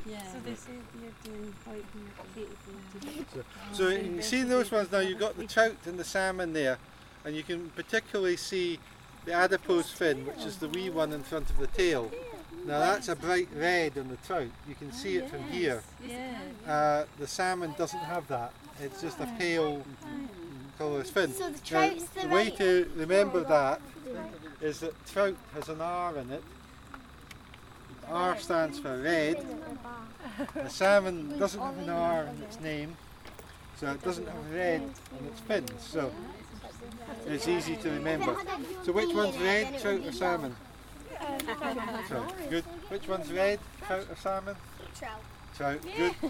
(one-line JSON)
{"title": "Volunteer Park, Hawick, Scottish Borders, UK - Fish ID, River Teviot", "date": "2013-06-13 13:31:00", "description": "Biologist teaches families how to identify fish in the River Teviot in Hawick, Scottish Borders. How do you tell trout from salmon? Ron Campbell from the Tweed Foundation puts the children on the spot. Sound of generator in background.", "latitude": "55.42", "longitude": "-2.80", "altitude": "109", "timezone": "Europe/London"}